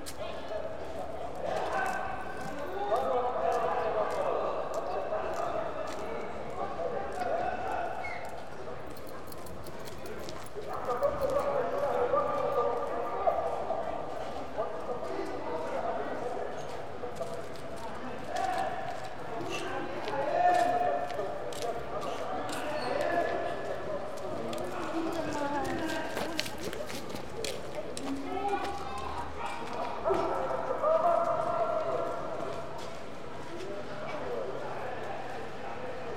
Kom El Deka, Alexandria, Egypt
Kom el Deka (Kom el Dik) is a popular area in Alex, hidden in the center part of the city, between its most luxurious streets. The area is famous becouse is populated by black Egyptian, mostly coming from the south of the country.
El Attareen, Alexandria, Egypt